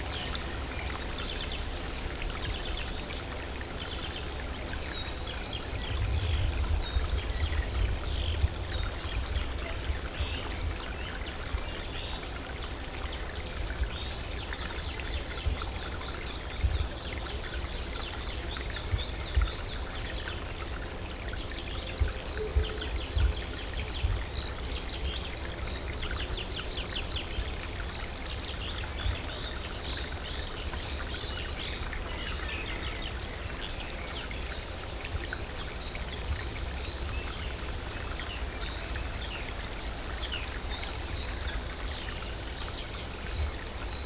thin brook seeping among the trees. (roland r-07)